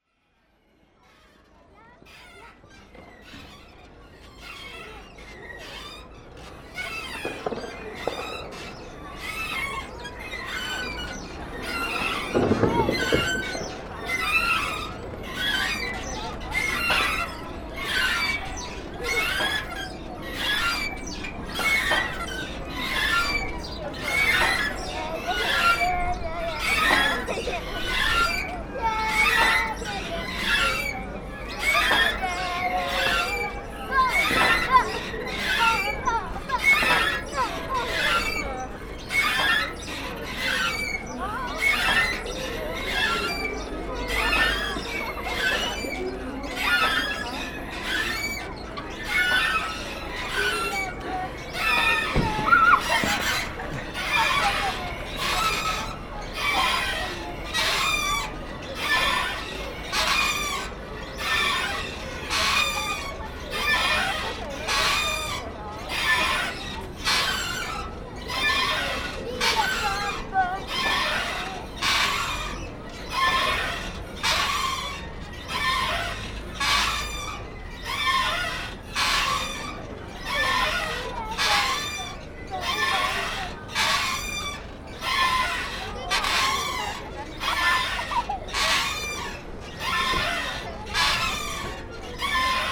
København, Denmark - Funny swings
Nørrebro is a funny disctrict. It's said that Denmark is the happiest country in the world. We can understand this as you can play (and drink and fraternize) at every street corner. Here is the sound of children playing in the swings.